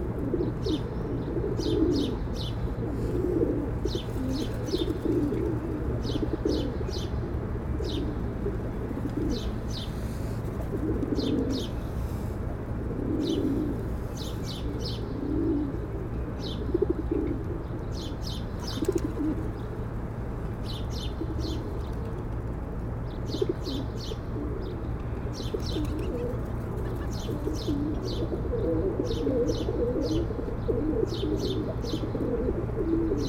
Hamburg, Deutschland - Pigeons
Binnenalster, Ballindamm. On the dock, pigeons flirting.